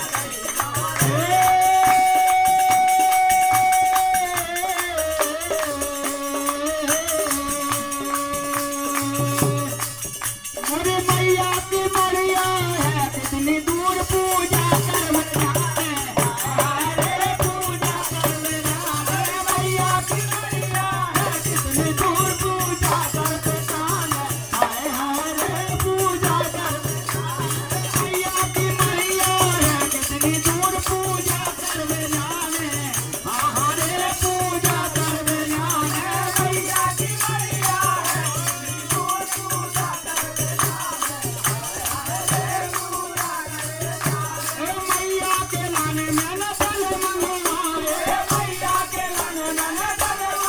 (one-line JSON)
{"title": "Pachmarhi, Madhya Pradesh, Inde - Hindus singing a pray", "date": "2015-10-18 17:03:00", "description": "In the end of afternoon, a group of men sings in a very small temple.", "latitude": "22.46", "longitude": "78.41", "altitude": "1098", "timezone": "Asia/Kolkata"}